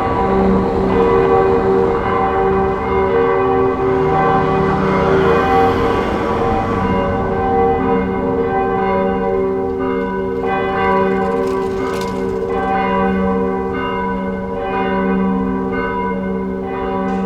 berlin: nansenstraße/reuterplatz - the city, the country & me: bells of nicodemus church and saint christopher church
recorded at a central position between nicodemus church and saint christopher church, nicodemus starts and finishes the ringing session
World Listening Day (WLD) 2011
the city, the country & me: july 18, 2011
Berlin, Germany, 18 July, ~18:00